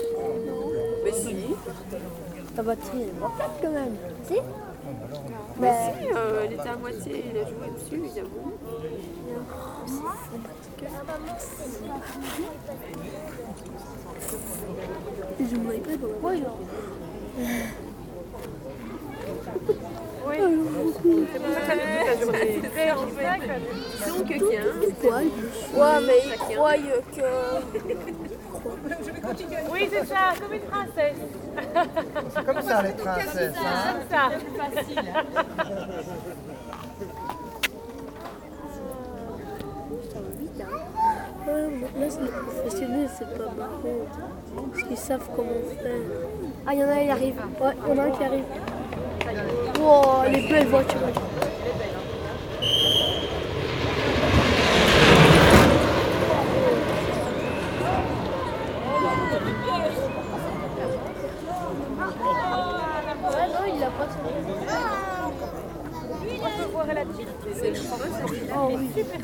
Mont-Saint-Guibert, Belgique - Soapbox race
Soapbox race in Mont-St-Guibert, the very beginning of the race.
Mont-Saint-Guibert, Belgium, 13 September 2015